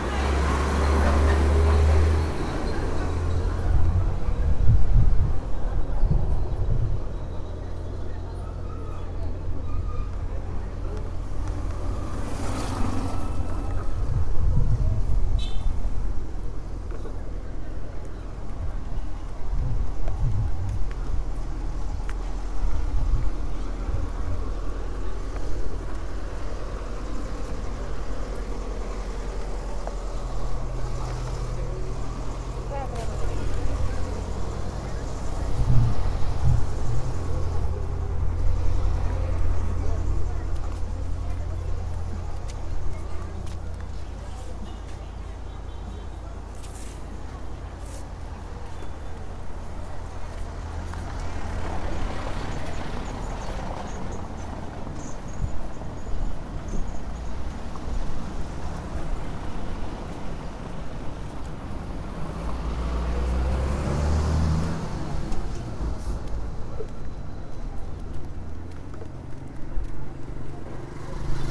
Cachoeira, BA, Brasil - Caminhada Pela Antonio Carlos Magalhães 2
Trabalho realizado para a disciplina de Sonorização I - Marina Mapurunga - UFRB - 2014
Anna Paiva
22 February 2014, 07:15